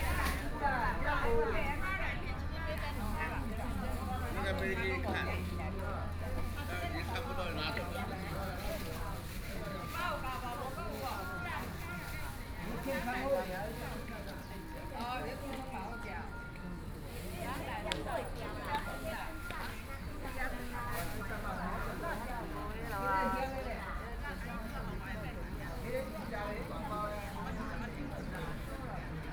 忠烈祠, Hualien City - elderly and greengrocer
Traffic Sound, Birdsong, Morning elderly and greengrocer